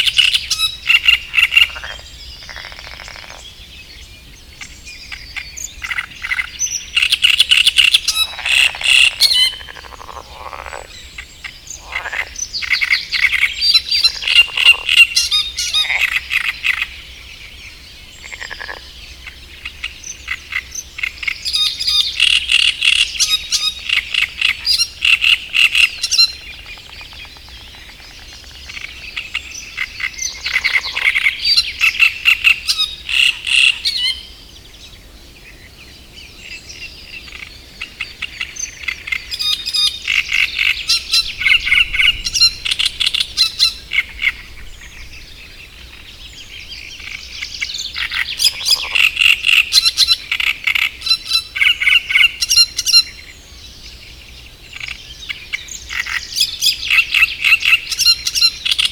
Gmina Tykocin, Poland - great reed warbler and marsh frogs soundscape ...
Kiermusy ... great reed warbler singing ... frog chorus ... sort of ... pond in hotel grounds ... open lavalier mics either side of a furry table tennis bat used as a baffle ... warm sunny early morning ...
Kiermusy, Poland